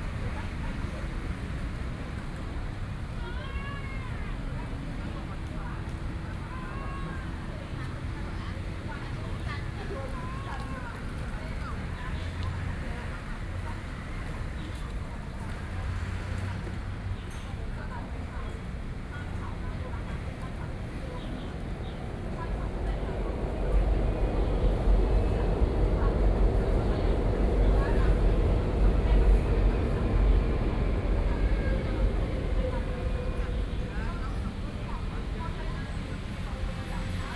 Sec., Donghua St., 北投區, Taipei City - Rest
3 November, ~10:00